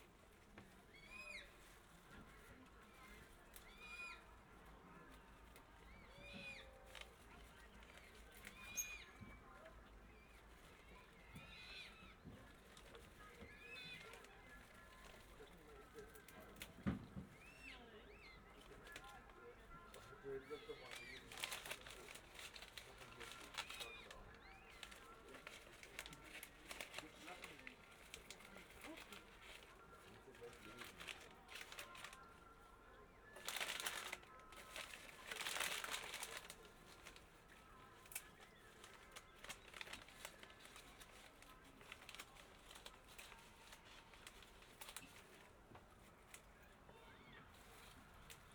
workum, het zool: marina, berth h - the city, the country & me: cooking aboard
cooking aboard, music from the nearby campsite, strange call of a coot (plop)
the city, the country & me: august 4, 2012